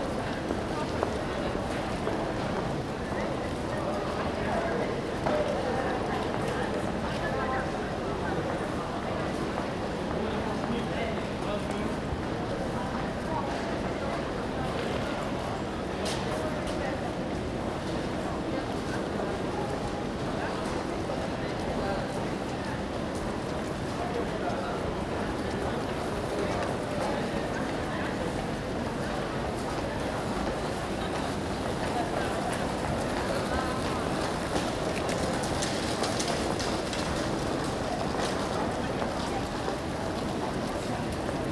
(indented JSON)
{
  "title": "Sentrum, Oslo [hatoriyumi] - Stazione C.le, passi e voci di viaggiatori",
  "date": "2012-04-24 14:07:00",
  "description": "Stazione C.le, passi e voci di viaggiatori",
  "latitude": "59.91",
  "longitude": "10.75",
  "altitude": "18",
  "timezone": "Europe/Oslo"
}